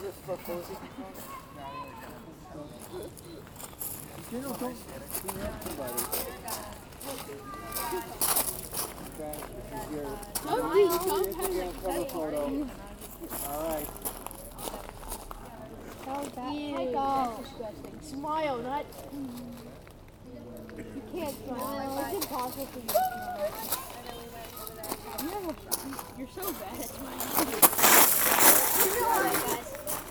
Chartres, France - Tourists behind the cathedral
Cathedral of Chartres - In the gravels bordering the cathedral gate, people walk quietly. A group of American tourists achieves a long selfie session, which requires appreciation and approval of every protagonist. We are simply there in the everyday sound of Chartres.
31 December 2018, ~13:00